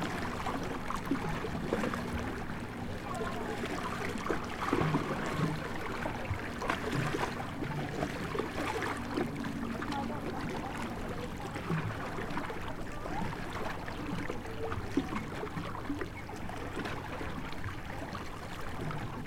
Sur la digue Sud du port de Châtillon, clapotis dans les rochers, conversations de plage en arrière plan, le lac du Bourget s'étend de toute sa longueur.
Auvergne-Rhône-Alpes, France métropolitaine, France, 29 June, ~17:00